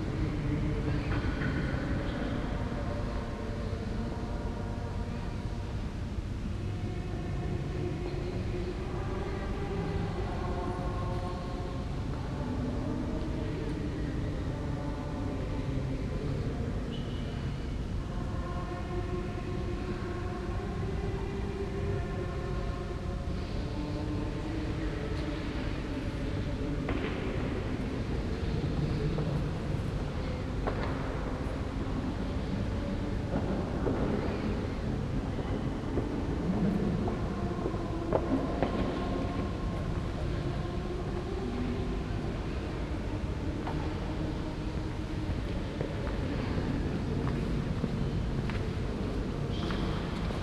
velbert, neviges, marienkirche - velbert, neviges, mariendom
collage of sounds recorded at and in the mariendom-neviges - opening of the main door, walk thru the cathedrale, sounds of people whispering, singing og a choir
project: :resonanzen - neanderland - soundmap nrw: social ambiences/ listen to the people - in & outdoor nearfield recordings, listen to the people